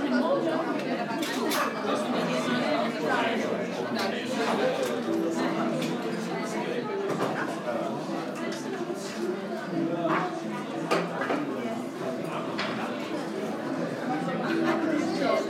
Indoor ambience of a cafe in north of Tehran
بخش رودبار قصران, شهرستان شمیرانات, ایران, 17 March 2017